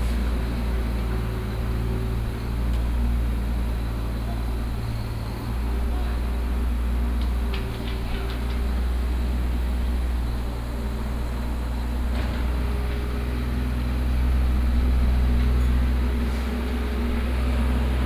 Gangshan Rd., Beitou Dist. - Construction
Fill pavement engineering, Binaural recordings, Sony PCM D50 + Soundman OKM II
Taipei City, Taiwan